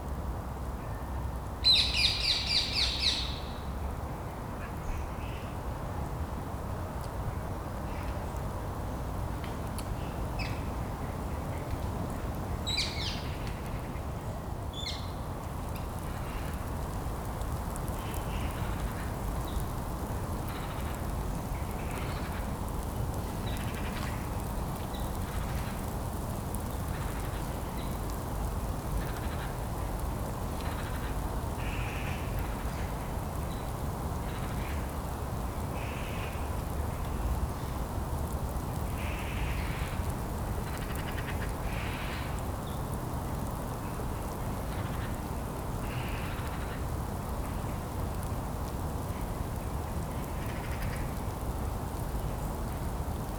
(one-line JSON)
{"title": "Abbey Wood, Belvedere, Greater London, UK - Tanya Boyarkina - Abbey Wood on a snowy day", "date": "2013-01-19 14:45:00", "description": "Snowy ambience in Abbey Wood.", "latitude": "51.49", "longitude": "0.12", "altitude": "46", "timezone": "Europe/London"}